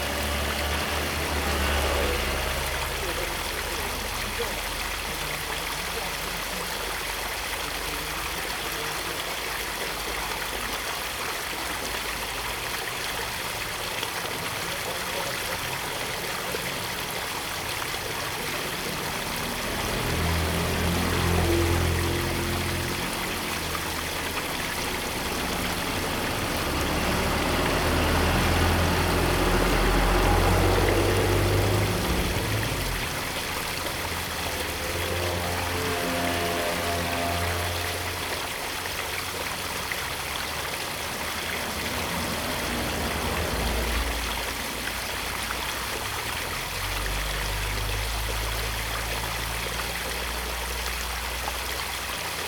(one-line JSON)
{"title": "Minzu St., Yuli Township - Drainage channel", "date": "2014-10-09 16:32:00", "description": "Traffic Sound, Drainage channel, Water sound\nZoom H2n MS+XY", "latitude": "23.34", "longitude": "121.31", "altitude": "135", "timezone": "Asia/Taipei"}